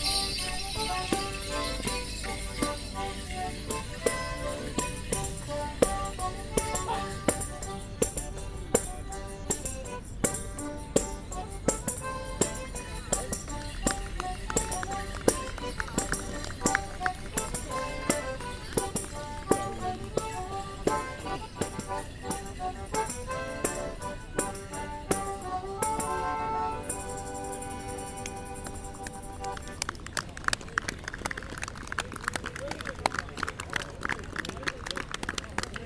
Goring Lock, Goring, Reading, UK - The Kennet Morris Men dancing at the lock
The Kennet Morris Men performing at Goring Lock.
1 May 2017